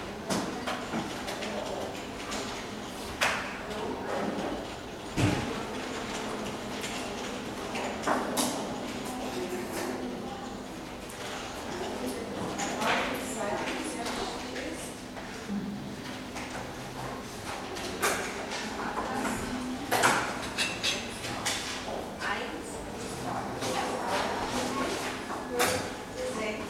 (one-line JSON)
{"title": "Erlangen, Deutschland - waiting for the doctor", "date": "2013-06-06 11:30:00", "description": "office and X-ray sounds, slamming of doors, voices", "latitude": "49.59", "longitude": "11.03", "altitude": "285", "timezone": "Europe/Berlin"}